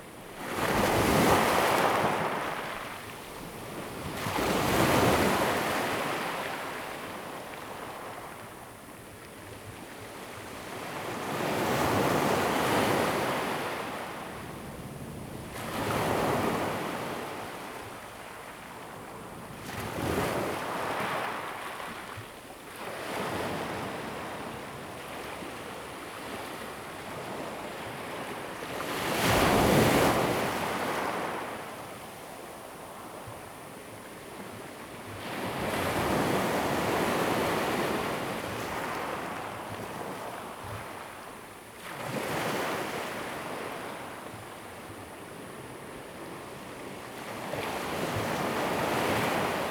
新社村, Fengbin Township - Small pier
Small pier, Sound of the waves, Very Hot weather
Zoom H2n MS+XY